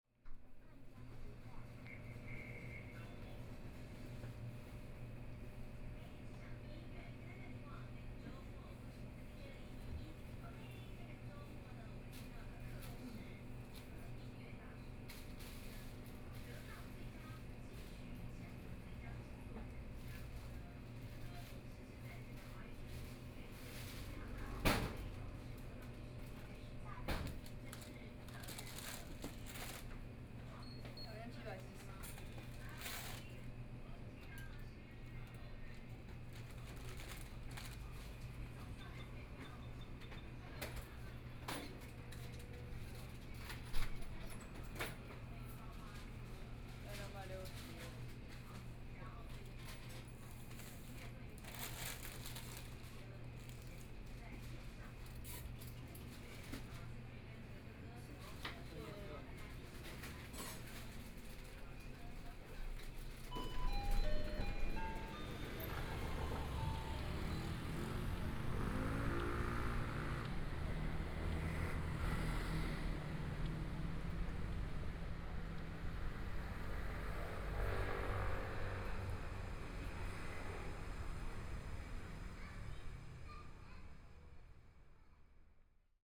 {"title": "台北市中山區江山里 - In convenience stores", "date": "2014-02-08 13:13:00", "description": "In convenience stores, Environmental sounds, Binaural recordings, Zoom H4n+ Soundman OKM II", "latitude": "25.06", "longitude": "121.54", "timezone": "Asia/Taipei"}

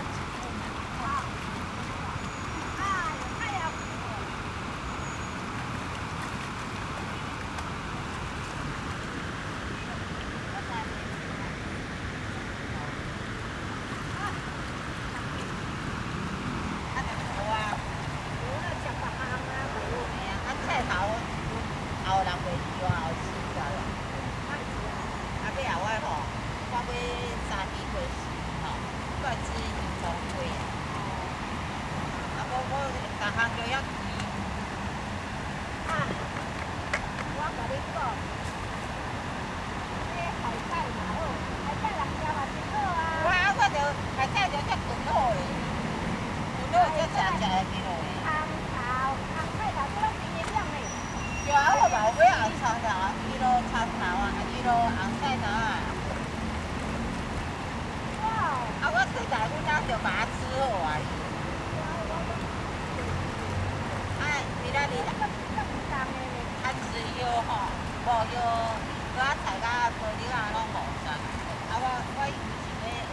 {"title": "青潭溪, Xindian Dist., New Taipei City - in the stream", "date": "2011-12-18 15:07:00", "description": "In brook, Several women washing clothes in the stream\nZoom H4n + Rode NT4", "latitude": "24.95", "longitude": "121.55", "altitude": "37", "timezone": "Asia/Taipei"}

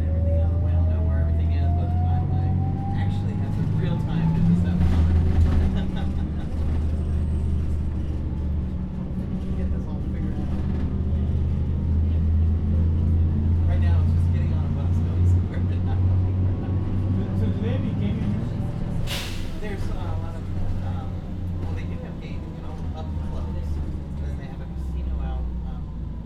{"title": "neoscenes: 333 bus to Bondi", "latitude": "-33.88", "longitude": "151.21", "altitude": "43", "timezone": "Australia/NSW"}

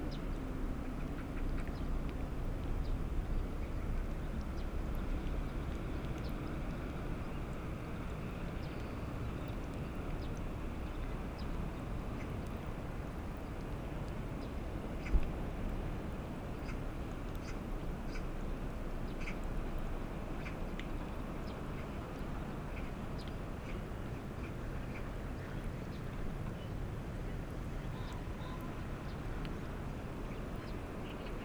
{"title": "진도 갯벌 exposed mudflat on Jindo", "date": "2022-04-26 15:00:00", "description": "진도 갯벌_exposed mudflat on Jindo...mudflat life stirring", "latitude": "34.37", "longitude": "126.20", "altitude": "3", "timezone": "Asia/Seoul"}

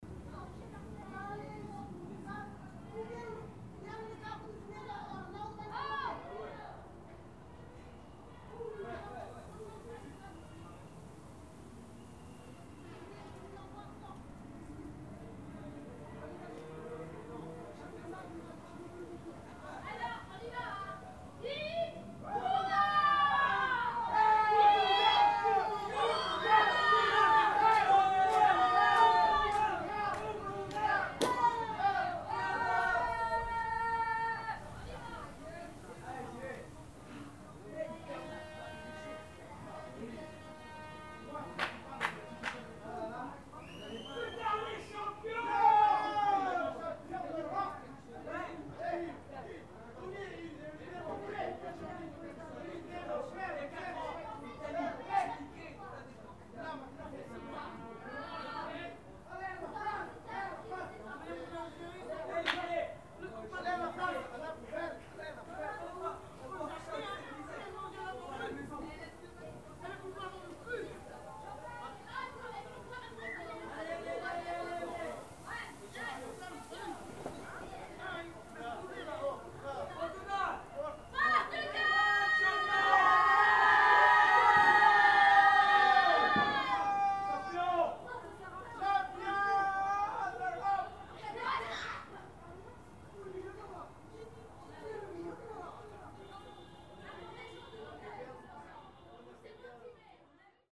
{"title": "Montreuil, France - Portuguese family celebrates Euro 2016", "date": "2016-07-10 23:01:00", "description": "A Portuguese family celebrates the victory of the Portguese team in Montreuil, Paris.\nZoom H4n", "latitude": "48.87", "longitude": "2.45", "altitude": "99", "timezone": "Europe/Paris"}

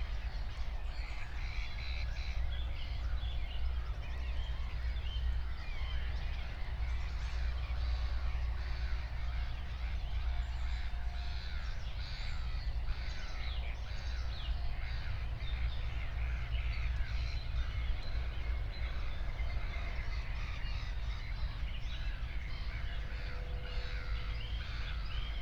{"date": "2021-06-28 04:00:00", "description": "04:00 Berlin, Buch, Moorlinse - pond, wetland ambience", "latitude": "52.63", "longitude": "13.49", "altitude": "51", "timezone": "Europe/Berlin"}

